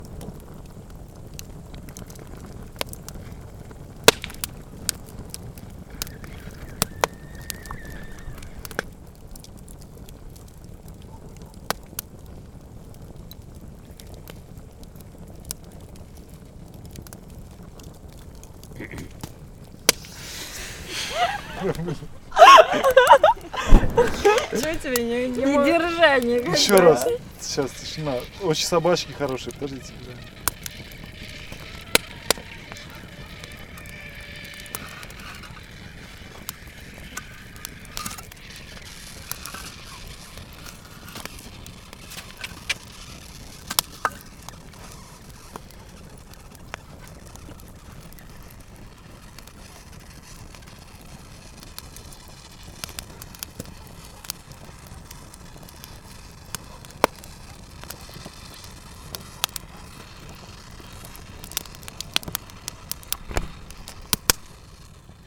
Opechensky Posad, Novgorodskaya region, Russia - Opechensky Posad August 07 2011 night
Night recording of camp-fire in small Russian town.